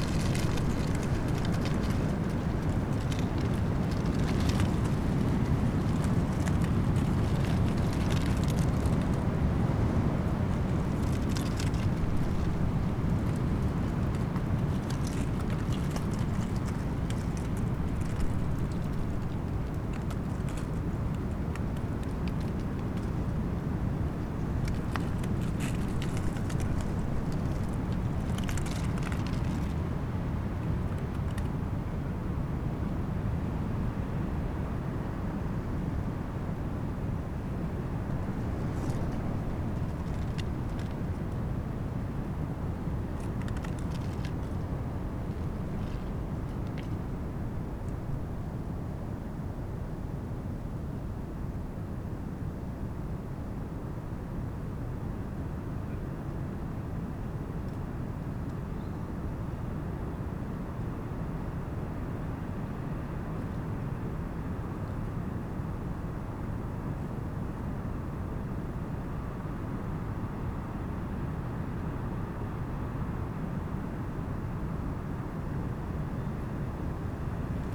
seedorf: ehemaliges schulhaus - the city, the country & me: former school house, patio
dry leaves during storm
the city, the country & me: march